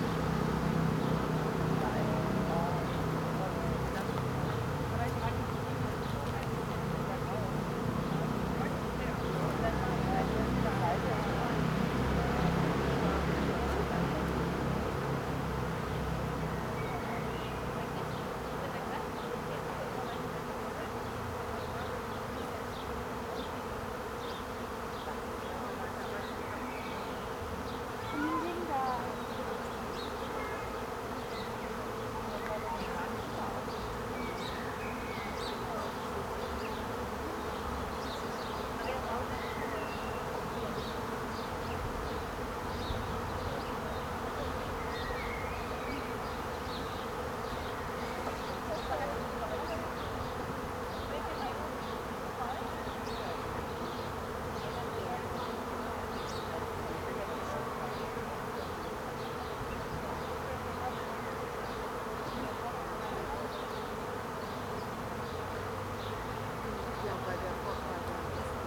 Prinzessinnengarten Moritzplatz, Prinzenstraße, Berlin - colony of bees
Prinzessinnengarten Berlin, suddenly a colony of bees appeared in the garden, gathering just above me in a tree.
(Sony PCM D50)